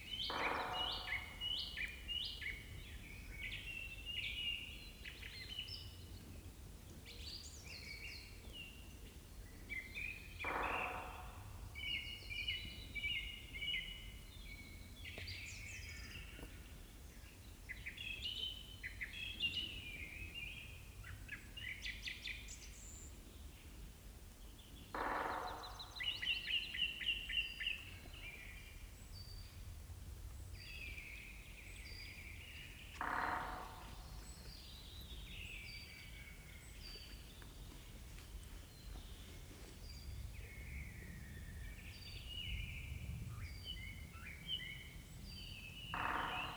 Vogelsang, ex Soviet base, Germany - Song thrush and woodpecker drumming
Recorded beside an old power plant with a precariously bent brick chimney at Vogelsang. Trees now grow unhindered throughout this abandoned Soviet military base, now a nature reserve. It is a 2km walk from the station or nearest road. One is free to explore the derelict buildings, which are open to the wind and weather. It is an atmospheric place that surprises with unexpected details like colourful murals and attractive wallpapers in decaying rooms. There is a onetime theater and a sports hall with ancient heating pipes dangling down the walls. Lenin still stands carved out in stone. Forest wildlife is abundant and the springtime birds a joy to hear.
Zehdenick, Germany, 26 April, 13:39